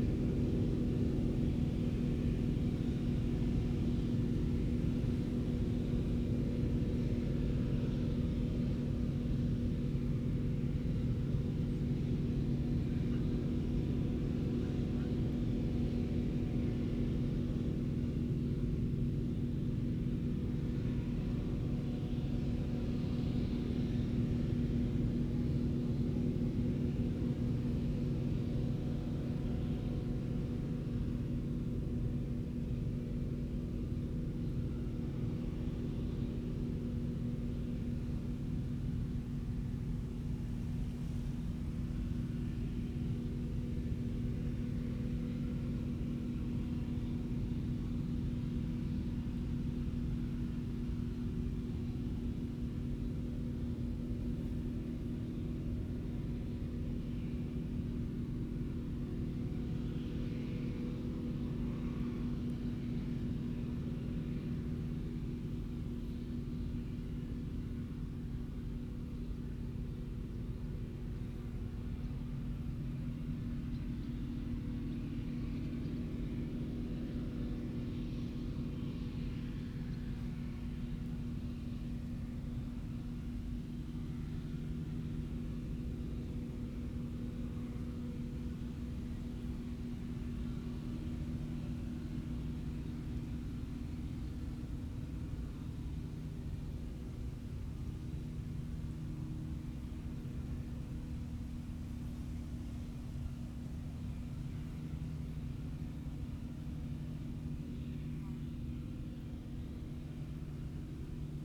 Combine harvesting ... plus the movement of tractors and trailers ... open lavalier mics clipped to sandwich box ...